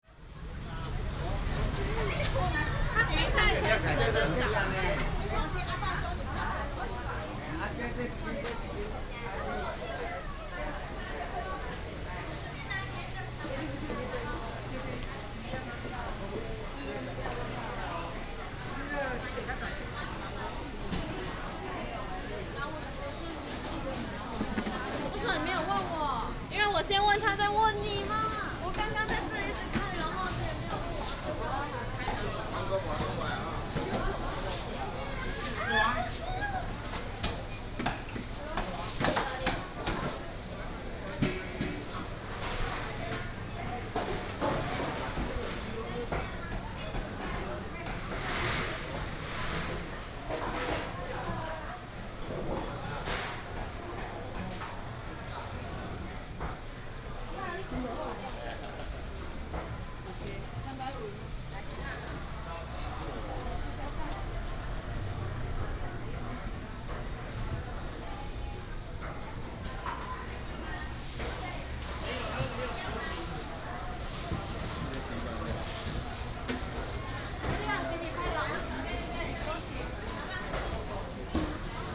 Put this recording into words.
Walking in the traditional market, lunar New Year, Binaural recordings, Sony PCM D100+ Soundman OKM II